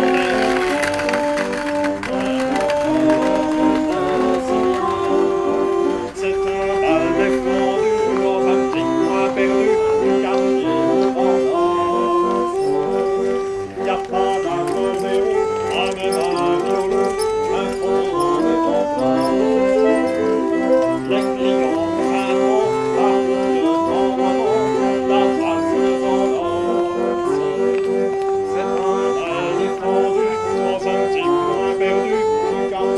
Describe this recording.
Orgue de Barbarie, chanson parisienne, world listening day